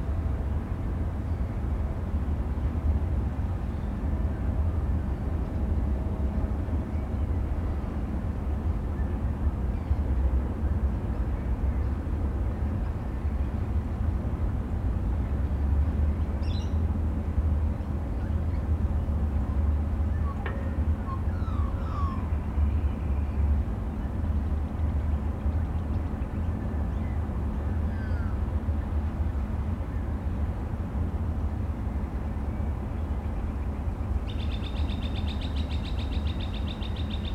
Balls Head Reserve, Balls Head Drive, Waverton NSW, Australia - Balls head lookout - morning on the harbour
Recorded with 4060s hanging from the guard rail at the lookout point of this reserve.. the harbour and city are a bustling backdrop to this beautiful location - DPA 4060s, custom preamps, H4n